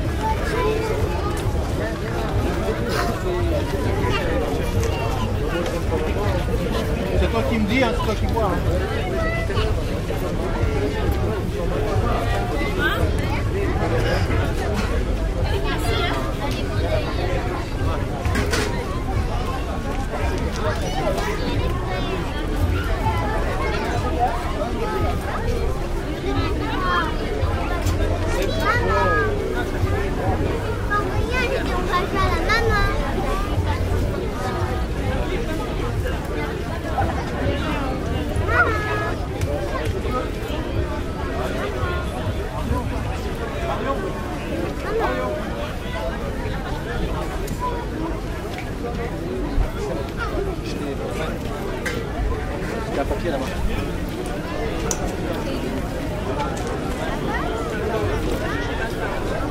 saint denis de la reunion marché de nuit
marché de nuit lors de la commémoration de lentrée dans le patrimoine de lUNESCO
St Denis, Reunion